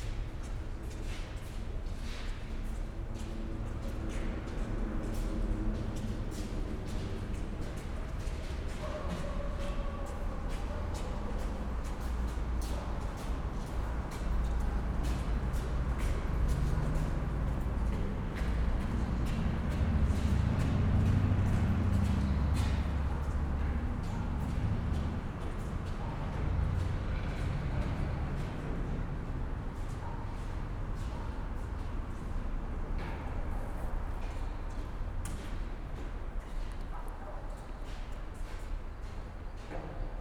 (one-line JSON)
{"title": "Grünau, Berlin - S-Bahn, station ambience", "date": "2014-03-30 14:30:00", "description": "S-Bahn station, Grünau near Berlin, station ambience, Sunday afternoon\n(SD702, DPA4060)", "latitude": "52.41", "longitude": "13.57", "altitude": "35", "timezone": "Europe/Berlin"}